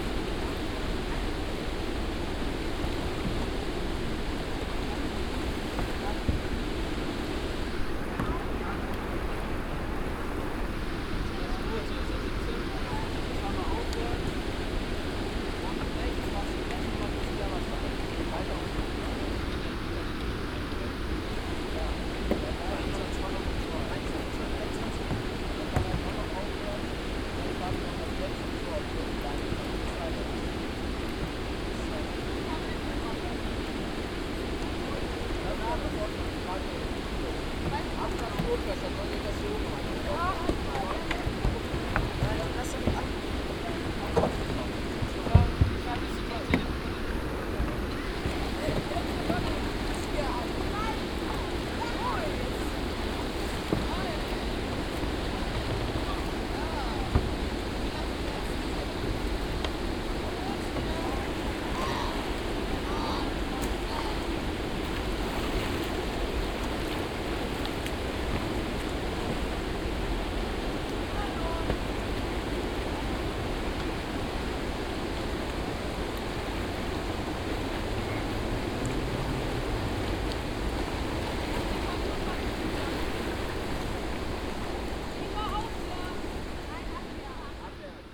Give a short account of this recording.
canou drivers on the lippe, here a specially prepared piece of the river, soundmap nrw - social ambiences and topographic field recordings